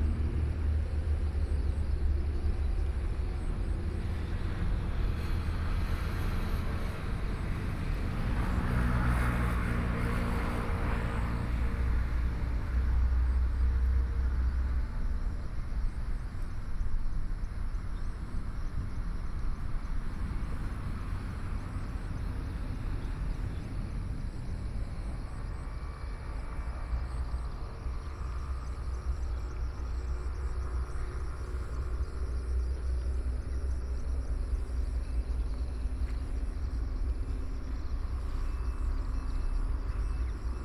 五結鄉鎮安村, Yilan County - Standing on the shore mention
Traffic Sound, Standing on the shore mention
Sony PCM D50+ Soundman OKM II